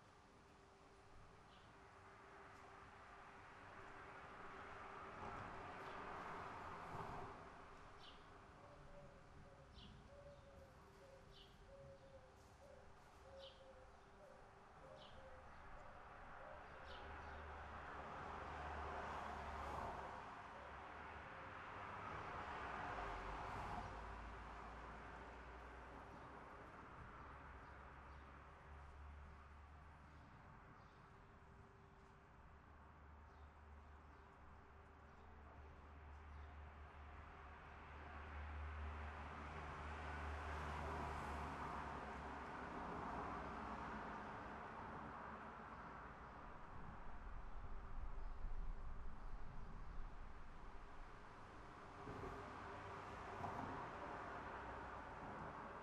Rijeka, Neverin
world listening day
Rijeka, Croatia, July 2010